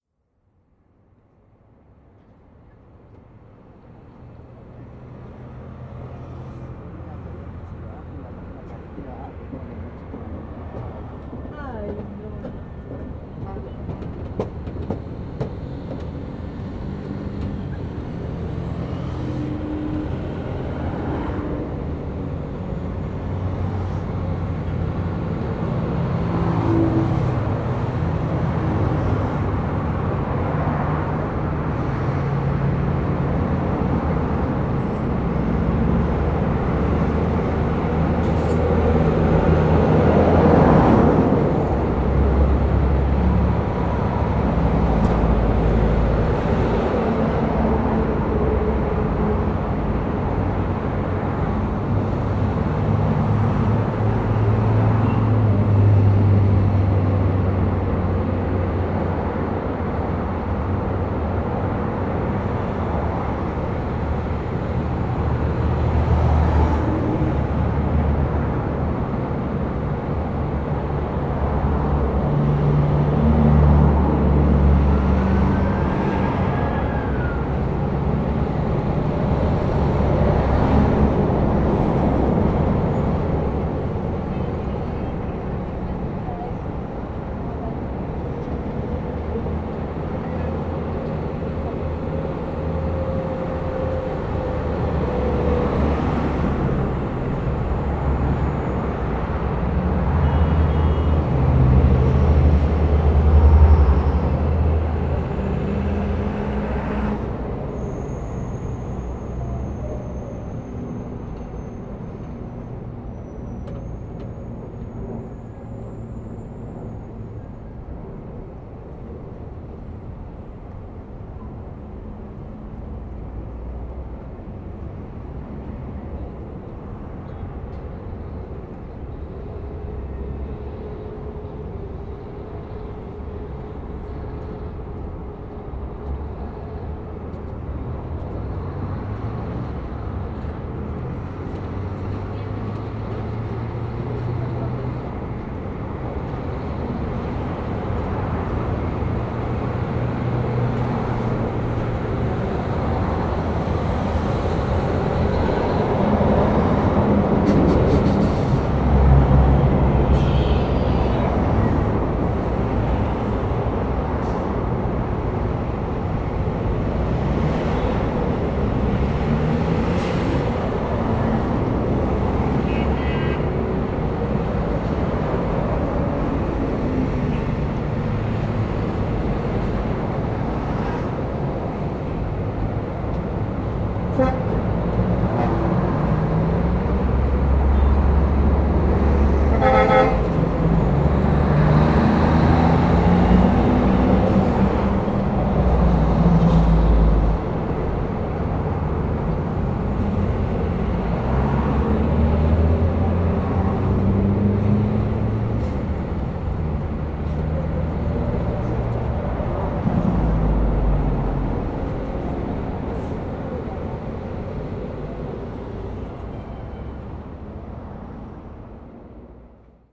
Modelia Occidental, Bogotá, Colombia - Av Cali, at 11.00am
Av Cali a las onces de la mañana avenida de tràfico pesado.